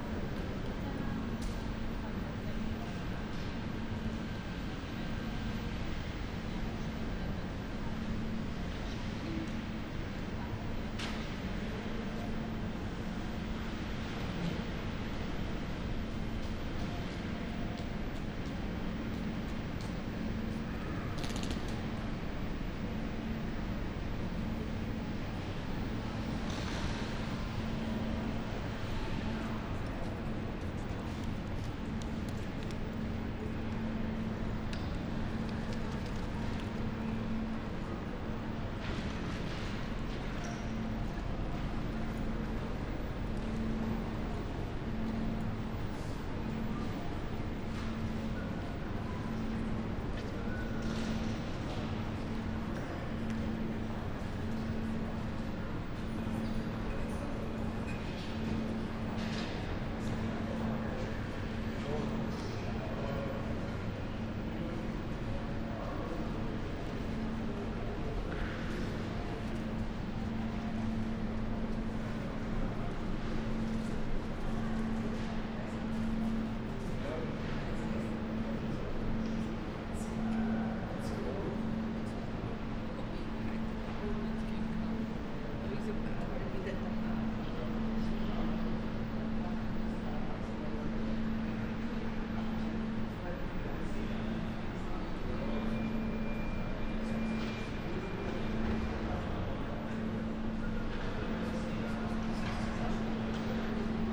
Graz, Hauptbahnhof - station walking
walking around Graz main station at Friday night
(Sony PCM D50, Primo EM172)
31 January 2020, 23:05